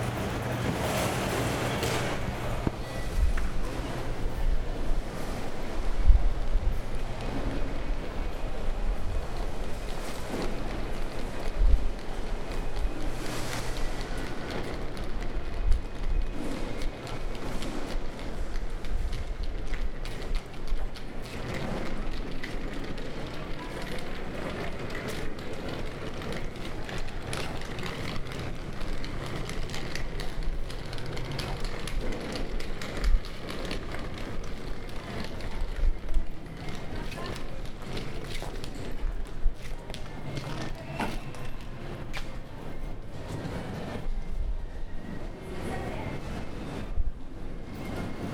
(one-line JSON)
{"title": "Orlando Airport, Florida, Security, Machinery", "date": "2010-06-10 23:25:00", "description": "Orlando Airport, Florida, Going through security and waiting at terminal. Machinery, Institutional design. Field", "latitude": "28.44", "longitude": "-81.32", "altitude": "25", "timezone": "America/New_York"}